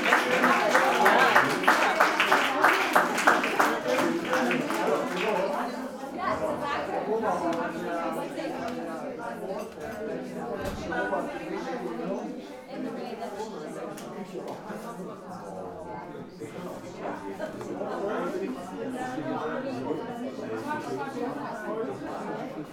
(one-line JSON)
{"title": "bonifazius, bürknerstr. - jazz concert", "date": "2011-02-12 23:35:00", "description": "cooljazz concert in the store", "latitude": "52.49", "longitude": "13.43", "altitude": "50", "timezone": "Europe/Berlin"}